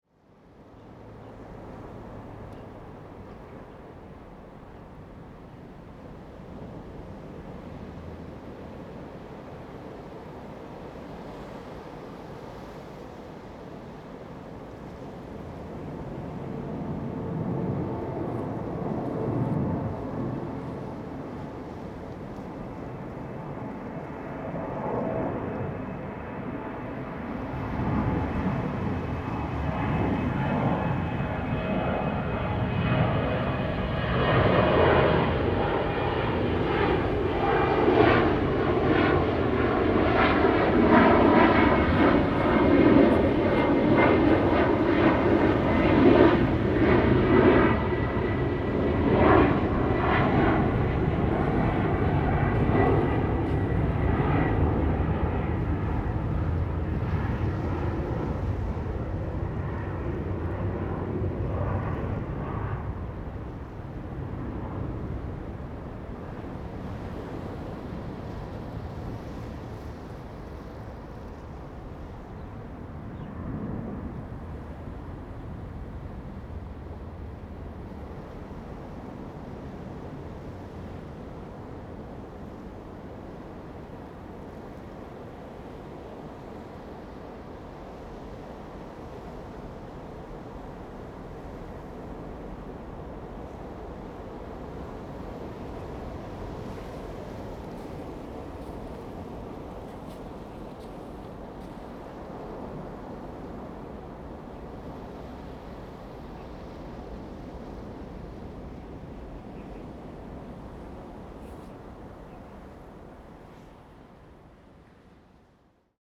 {
  "title": "Jinhu Township, Kinmen County - In the coastal edge",
  "date": "2014-11-03 16:41:00",
  "description": "Sound of the waves, In the coastal edge, Aircraft flying through\nZoom H2n MS+XY",
  "latitude": "24.44",
  "longitude": "118.39",
  "altitude": "6",
  "timezone": "Asia/Taipei"
}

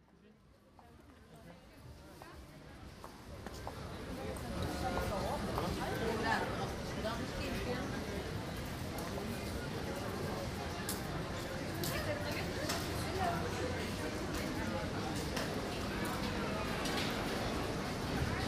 {
  "title": "V&D department store, The Hague",
  "date": "2010-08-07 13:21:00",
  "description": "Interior of the V&D department store. Walking through several departments.\nZoom H2 recorder with Sound Professionals SP-TFB-2 binaural microphones.",
  "latitude": "52.08",
  "longitude": "4.31",
  "altitude": "10",
  "timezone": "Europe/Amsterdam"
}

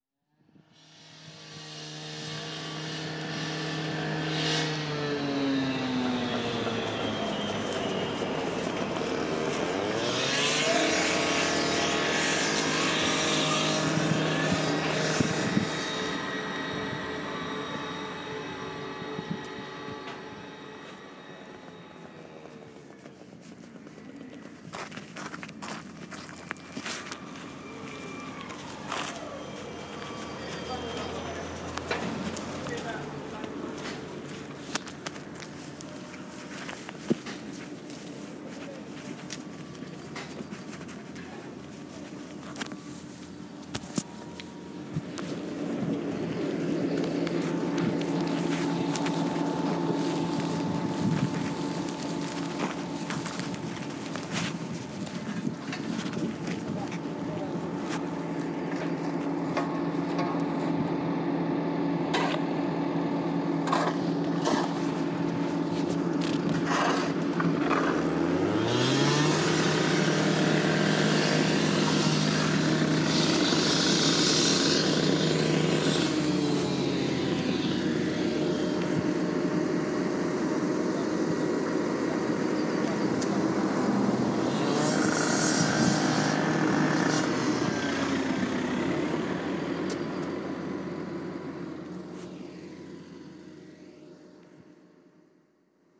{
  "title": "Tahtimarssi E, Oulu, Finland - construction site near Hiukkavaara school",
  "date": "2019-05-28 10:52:00",
  "description": "nearby Hiukkavaara school, construction site recording in collaboration with Hiukkavaaran koulu and children",
  "latitude": "65.01",
  "longitude": "25.60",
  "altitude": "25",
  "timezone": "Europe/Helsinki"
}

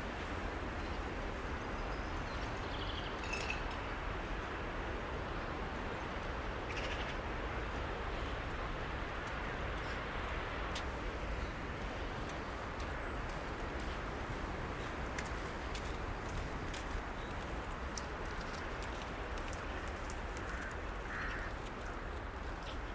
{
  "title": "cemetery ambience, Torun Poland",
  "date": "2011-04-08 10:25:00",
  "description": "spring sounds in the cemetery",
  "latitude": "53.02",
  "longitude": "18.60",
  "altitude": "51",
  "timezone": "Europe/Warsaw"
}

